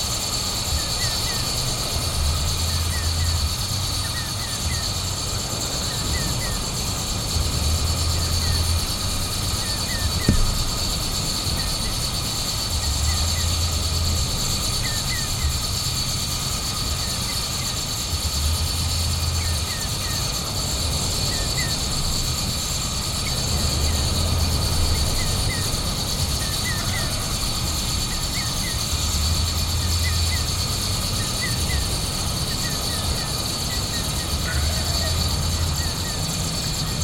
It is not every day when I am free from traffic noise. But when it happens it is possible to notice other small sounds in the surroundings.
That happened in beginning of June 2012 when I was at Krossholt at Barðastönd, in the northwest of Iceland.
One night someone was playing loud music in the neighborhood. The rumbling bass beat was noticeable all night along. During the night the wind started to blow from east with strong gusts. Suddenly nearby power line started to give a strange sound and the niggling beat from the neighborhood started to be interesting. In combination with the wind, power line, birdsong from the field and nearby cliff it started to be like a music from other planet. In fact it was a really interesting composition. Better than many modern human made compositions today. The intro is more than two minutes long, so just lay back in your chair, relax and listen.
High quality headphones are recommended.
Longer version with this recording can be found at: